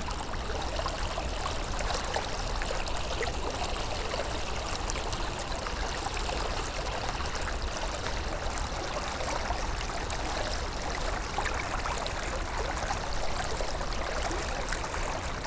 Moret-Loing-et-Orvanne, France - The Loing river
The Loing river flowing early on the morning.